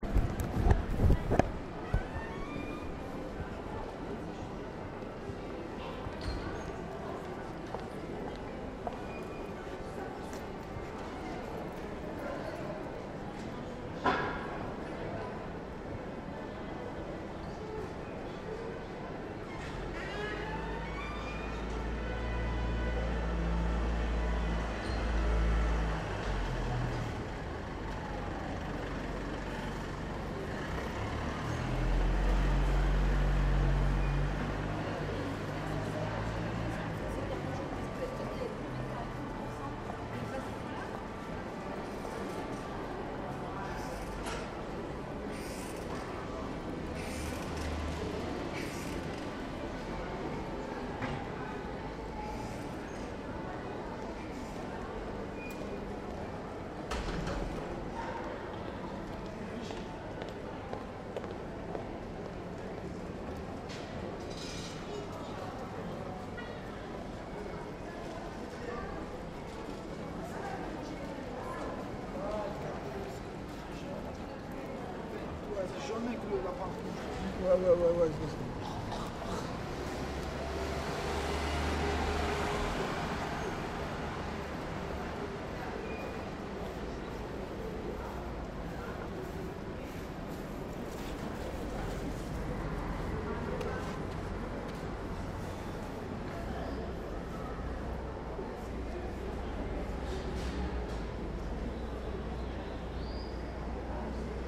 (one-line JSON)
{"title": "rue pietonne saint ferreol marseille", "description": "enregistré sur nagra ares bb le 07 fevrier 2010", "latitude": "43.30", "longitude": "5.38", "altitude": "20", "timezone": "Europe/Berlin"}